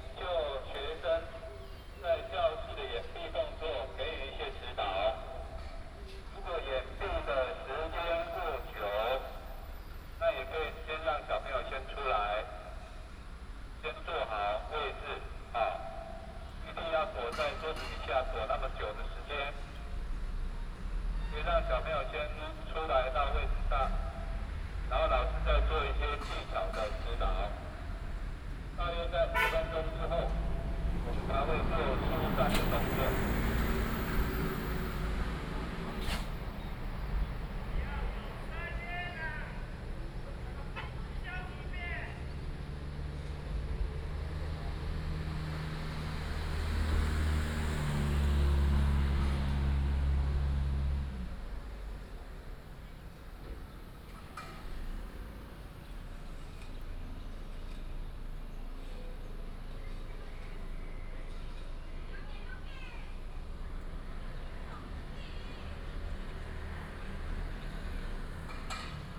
三灣國小, Sanwan Township - In the square of the temple
School for earthquake drills, Bird call, Traffic sound, Binaural recordings, Sony PCM D100+ Soundman OKM II